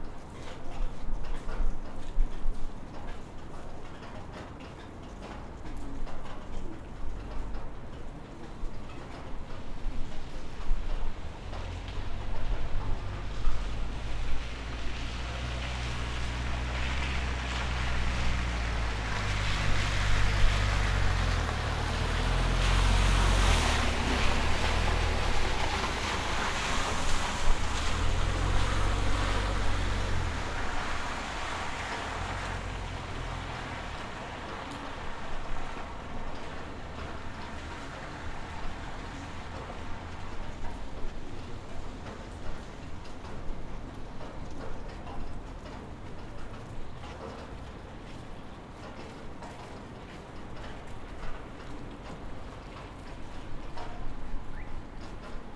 ул. 50-летия ВЛКСМ, Челябинск, Челябинская обл., Россия - Chelyabinsk, Russia, evening, passers-by walking in the snow, passing cars
Chelyabinsk, Russia, evening, passers-by walking in the snow, passing cars
recorded Zoom F1 + XYH-6